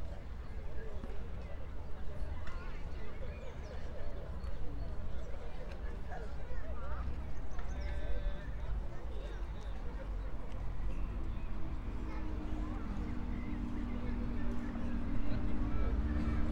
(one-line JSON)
{"title": "Brno, Lužánky - park ambience", "date": "2021-05-01 15:25:00", "description": "15:25 Brno, Lužánky\n(remote microphone: AOM5024/ IQAudio/ RasPi2)", "latitude": "49.20", "longitude": "16.61", "altitude": "213", "timezone": "Europe/Prague"}